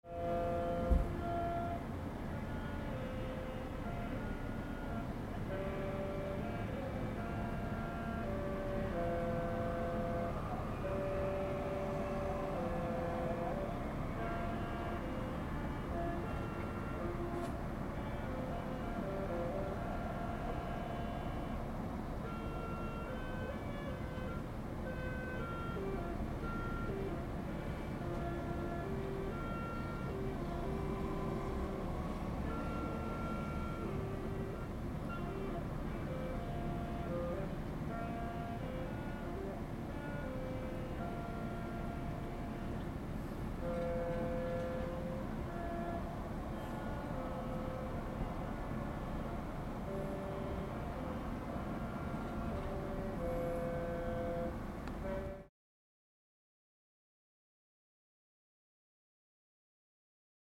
Daejeon, South Korea - Endless tune heard from the 14th floor
Man in a public park playing endlessly the same tune with his sax. Recorded from the 14th floor of Interciti Hotel, Daejeon, South Korea
Recorded with Zoom H2N.